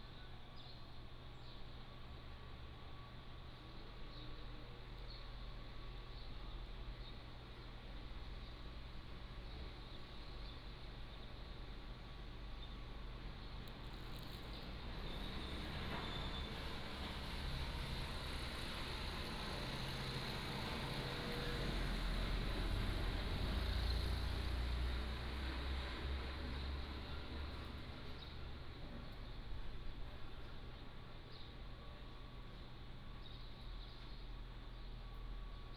塘岐村, Beigan Township - In the bus station
In the bus station, A small village in the morning
馬祖列島 (Lienchiang), 福建省 (Fujian), Mainland - Taiwan Border, 14 October 2014